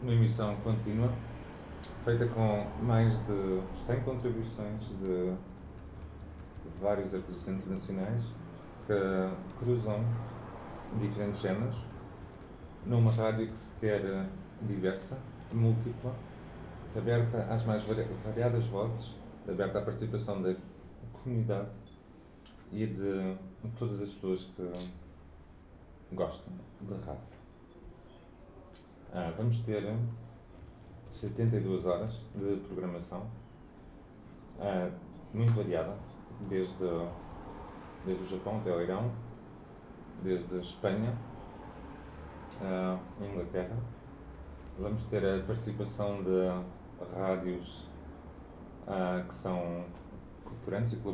Lisboa, RadiaLX radio festival - broadcast started
radialx broadcast has just started both as an internet stream and on FM radio
Lisbon, Portugal